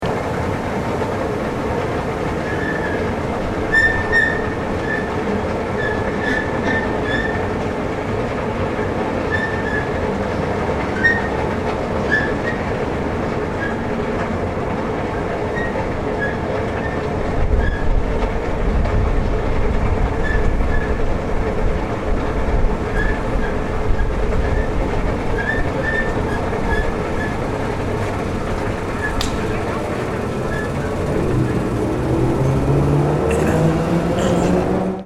essen hbf - rolltreppe, ausgang hachestr.
rolltreppe, ausgang hachestr.